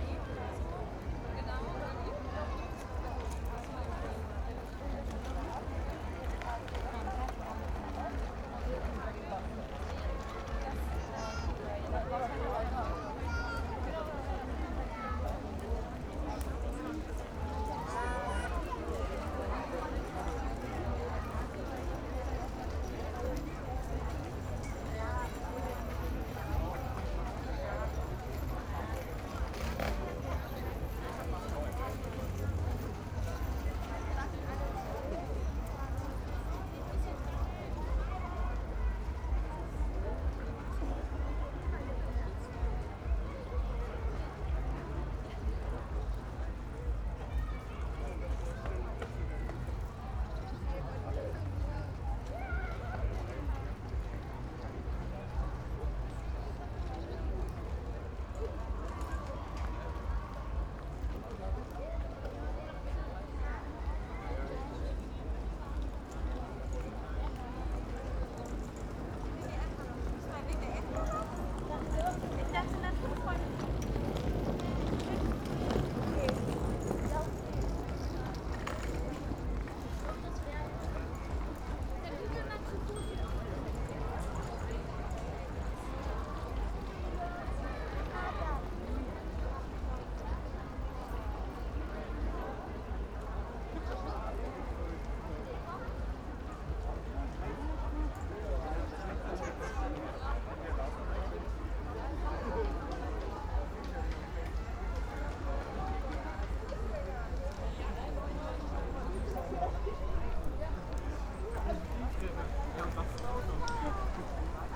Fridays for Future demonstration is pasing-by, in a rather quiet passage at Haus der Kulturen der Welt.
(Sony PCM D50)